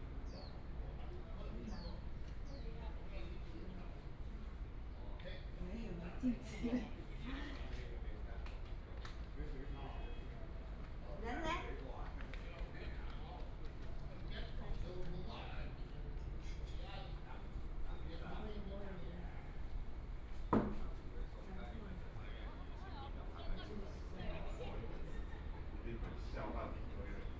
Dadu Station, 台中市大肚區 - In the station hall
In the station hall
Taichung City, Taiwan, 27 February 2017, 12:53